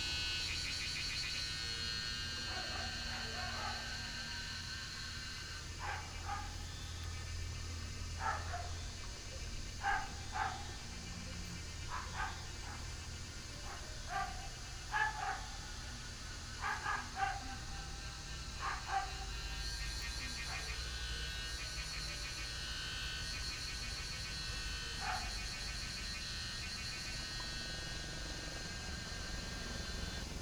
桃米里埔里鎮, Taiwan - A small village in the evening
Cicadas sound, Dogs barking, Ecological pool, A small village in the evening
Puli Township, 桃米巷52-12號